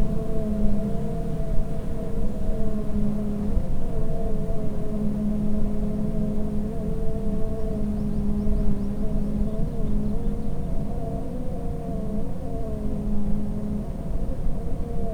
...the bees become somewhat disturbed and defensive as the apiarist opens up the hive and removes the combs for inspection...
강원도, 대한민국, 18 April 2020, 11:00